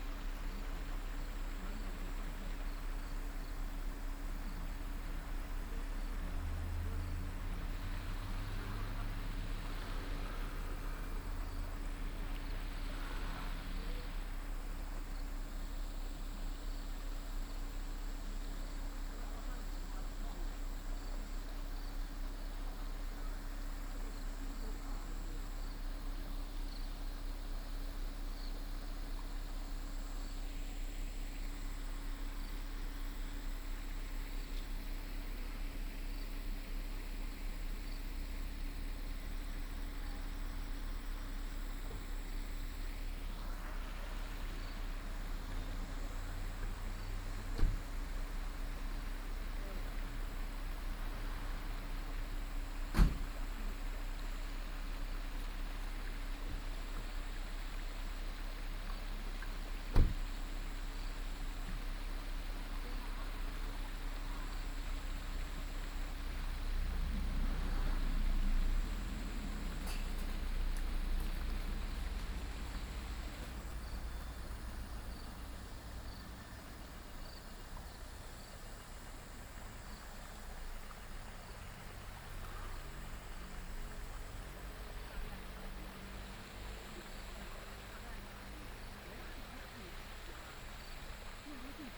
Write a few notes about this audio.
In the stream, Tourists, Traffic sound